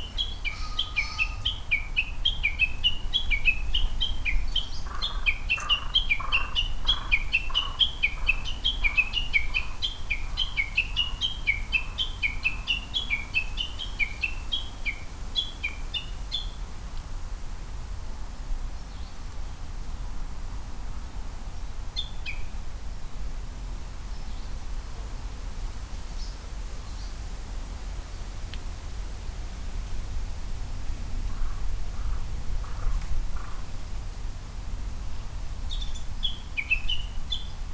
Ichimiyake Yasu-shi, Shiga-ken, Japan - Japanese bush warbler
Japanese bush warbler (uguisu, 鶯), pheasant (kiji, キジ), crow (karasu, カラス), and traffic sounds recorded on a Sunday afternoon with a Sony PCM-M10 recorder and Micbooster Clippy EM172 stereo mics attached to a bicycle handlebar bag.
4 June